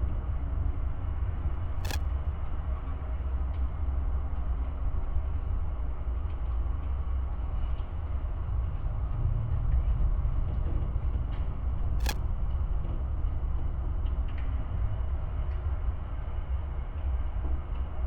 Tempelhofer Feld, Berlin - fence, radar signal
sound of a barrier fence, recorded with contact mics. every 10 sec a strange signal can be heard. it seems the nearby radar tower ist still in use.
(PCM D50, DIY contact mics)